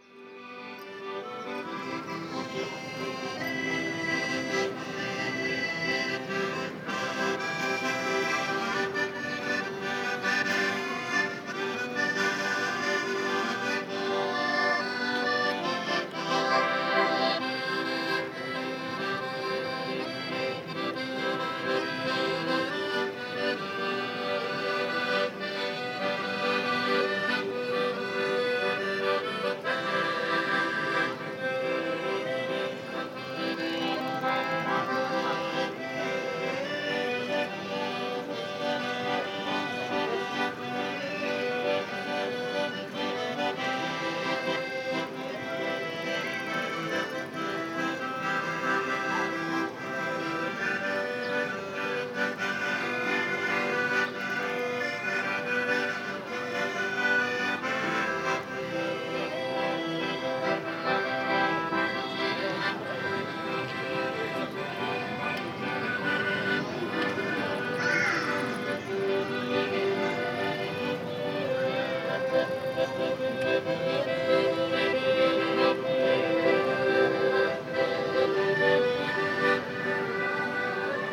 Rynek Kościuszki, Białystok, Poland - (126 BI) Accordeonist
Binaural recording of an accordionist playing.
Recorded with Soundman OKM on Sony PCM D100
województwo podlaskie, Polska